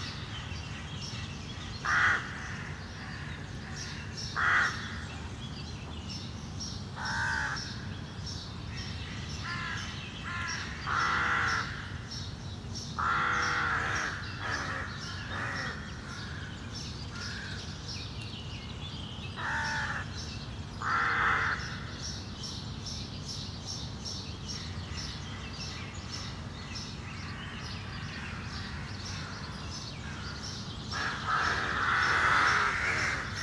Beaucoup d'animation dans une corbeautière maintenant disparue suite à la construction d'immeubles.